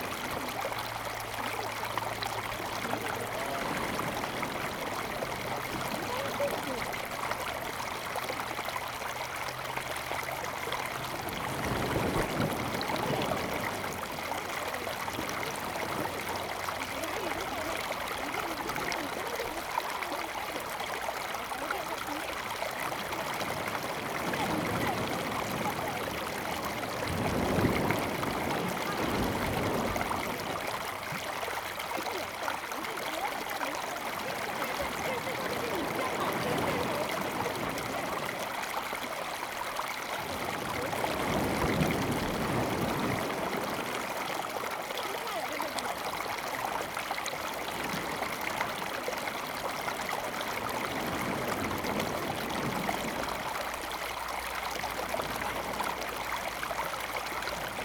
Waves and tourists, Sound streams, Very Hot weather
Zoom H2n MS+XY